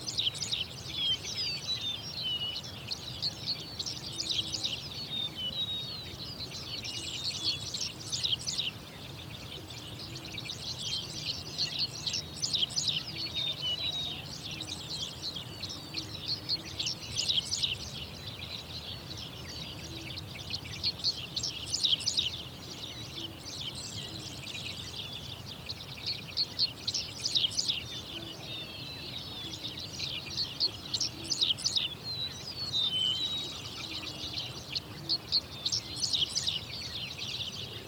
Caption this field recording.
Early morning birds singing in the tall-grass prairie reserve in Oklahoma, USA. Sound recorded by a MS setup Schoeps CCM41+CCM8 Sound Devices 788T recorder with CL8 MS is encoded in STEREO Left-Right recorded in may 2013 in Oklahoma (close to Pawhuska), USA.